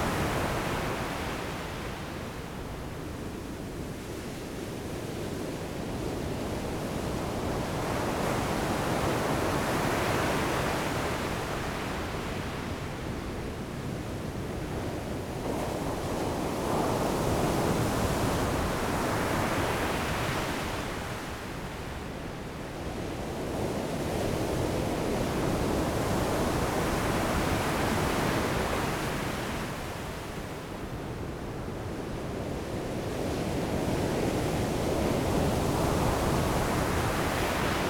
台東市, Taiwan - The beach at night
Sitting on the beach, The sound of the waves at night, Zoom H6 M/S
Taitung City, Taitung County, Taiwan, 16 January 2014